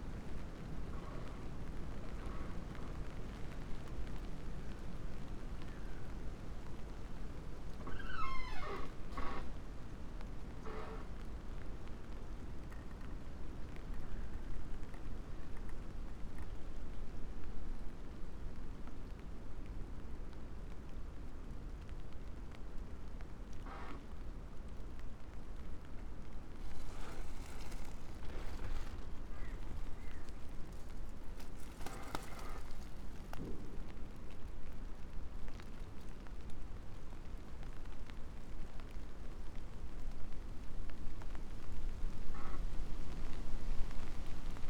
fallen tree, Piramida, Slovenia - creaking tree, umbrella